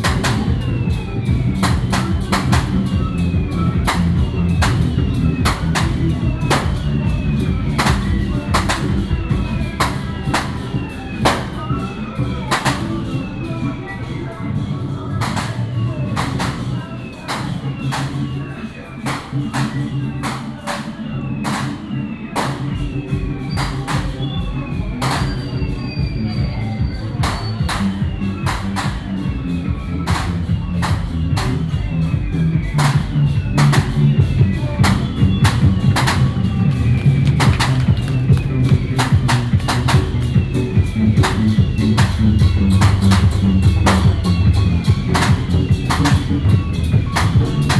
淡水福佑宮, New Taipei City - Walking in the temple
Walking in the temple, Firecrackers sound, temple fair
New Taipei City, Taiwan